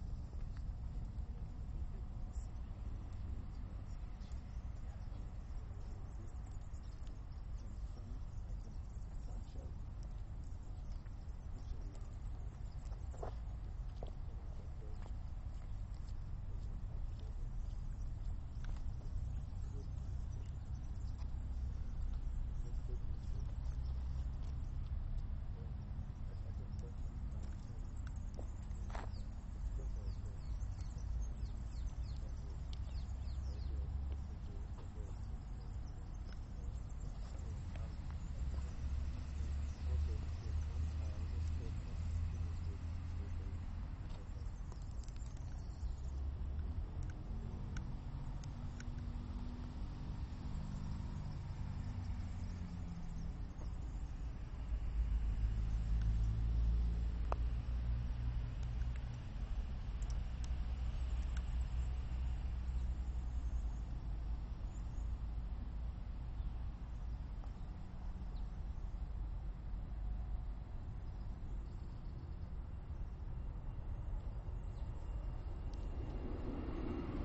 In this place were created community kitchen gardens.
Lisboa, Portugal, 3 December